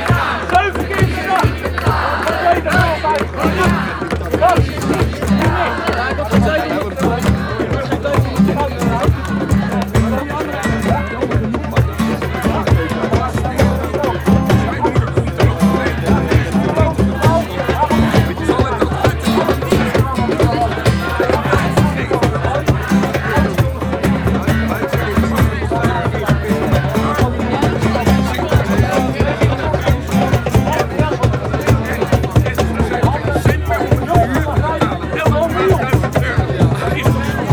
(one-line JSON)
{"title": "15O - Occupy Den Haag, Plein", "date": "2011-10-15 14:00:00", "latitude": "52.08", "longitude": "4.32", "timezone": "Europe/Amsterdam"}